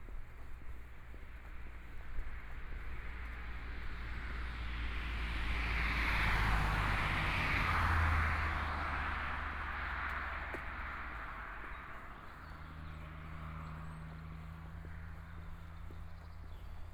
Munchner Straße, Munich Germany - walking on the Road
walking on the Road, Traffic Sound, Birdsong